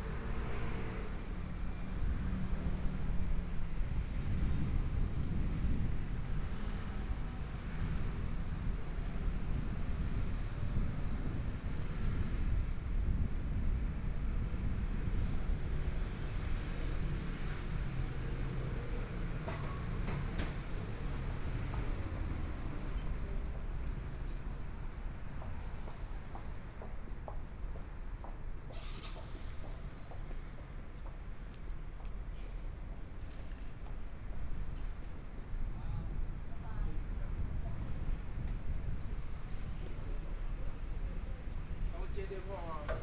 Songjiang Rd., Zhongshan Dist. - soundwalk
Walking in the small streets, Traffic Sound, Binaural recordings, Zoom H4n+ Soundman OKM II